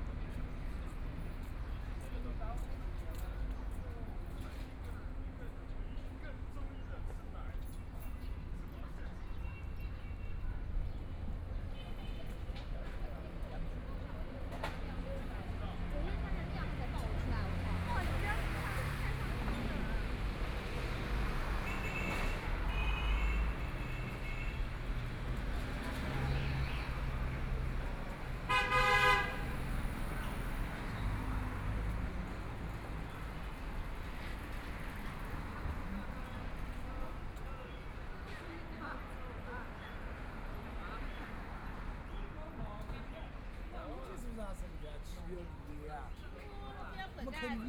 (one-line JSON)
{"title": "Nan Quan Road North, Shanghai - in the street", "date": "2013-11-21 11:41:00", "description": "Walking in the street, The crowd in the street, Traffic Sound, The sound of various transportation vehicles, Binaural recording, Zoom H6+ Soundman OKM II", "latitude": "31.23", "longitude": "121.51", "altitude": "14", "timezone": "Asia/Shanghai"}